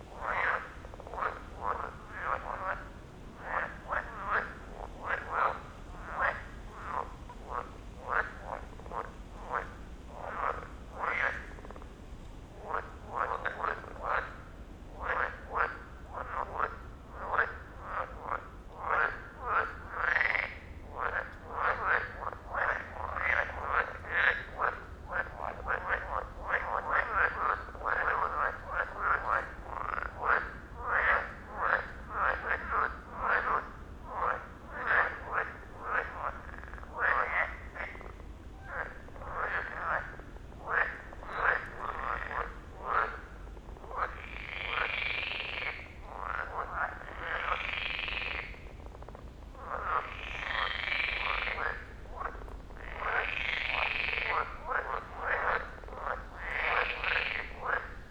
Königsheide, Berlin - frogs, wind, night ambience
late night visit at the frog pond, fresh wind in the trees
(SD702, Audio Technica BP4025)